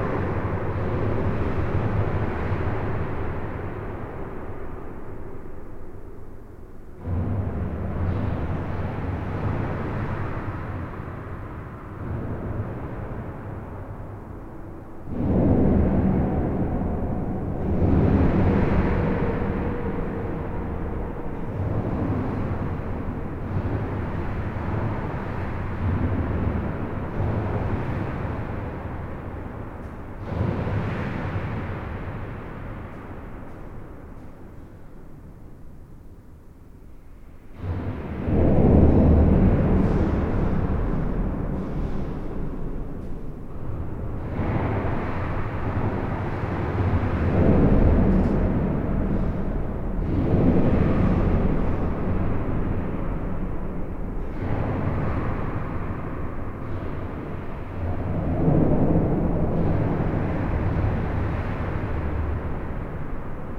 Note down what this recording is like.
This viaduct is one of the more important road equipment in all Belgium. It's an enormous metallic viaduct on an highway crossing the Mass / Meuse river. All internal structure is hollowed. This recording is made inside the box girder bridge, which is here in steel and not concrete. Trucks make enormous explosions, smashing joint with high velocity and high burden. Infrasounds are gigantic and make effects on the human body, it's sometimes difficult to sustain. It was very hard to record as everything terribly vibrate and drowned into infrasound strong waves, but an accomplishment. Flavien Gillié adviced me about this kind of recording, in a smaller structure, and thanks to him. It was a dream to record this mythical box girder.